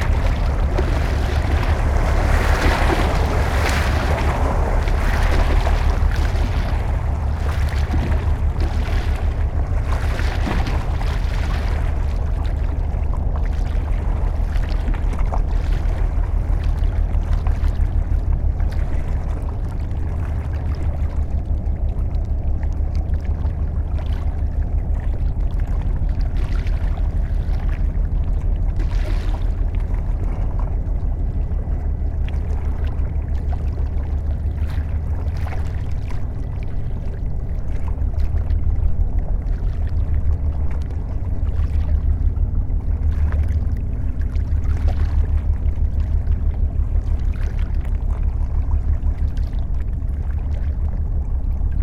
Sahurs, France - Boat
A boat is passing by on the Seine river and an hopper dredger is cleaning constantly the river bed.